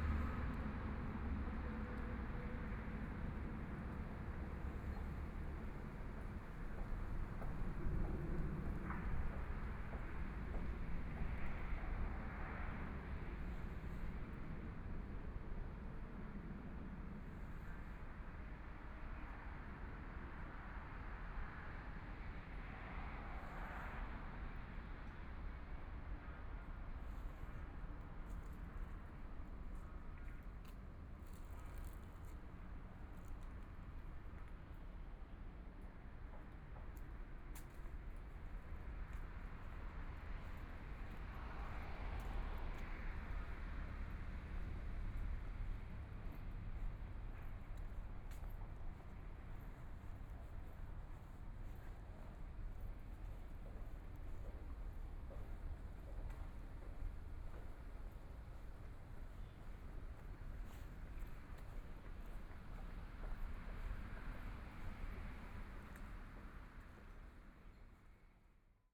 Songhu Road, Yangpu District - walking on the road
Aircraft flying through, Environmental sounds, in the Street, Suburbs, Traffic Sound, Beat sound construction site, Binaural recording, Zoom H6+ Soundman OKM II
Shanghai, China, 25 November 2013, ~12:00